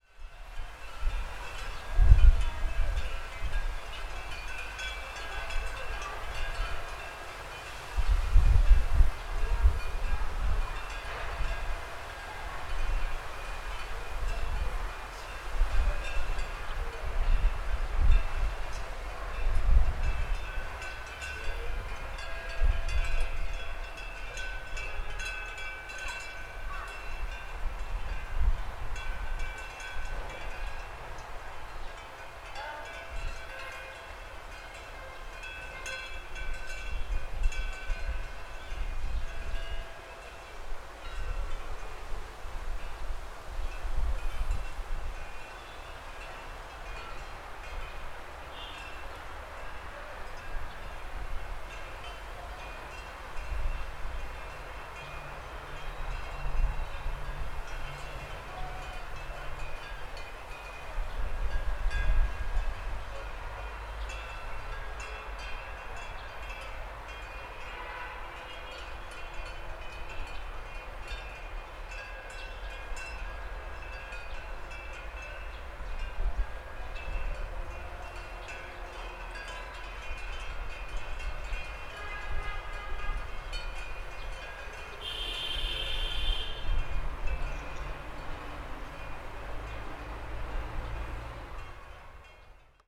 shouzu, china, bells
spirit_bells, ringing, tower, china, shouzu